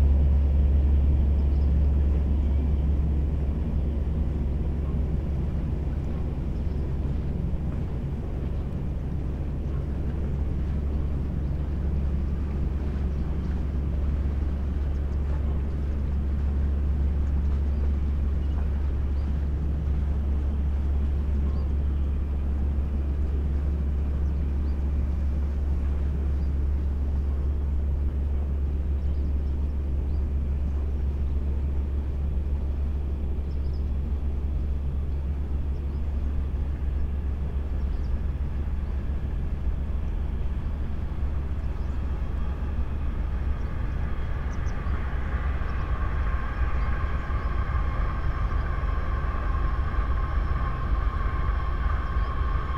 Saint-Pierre-d'Autils, France - Boat
A boat is passing by on the Seine river, going to Rouen. It's the Orca boat, a double boat transporting sand.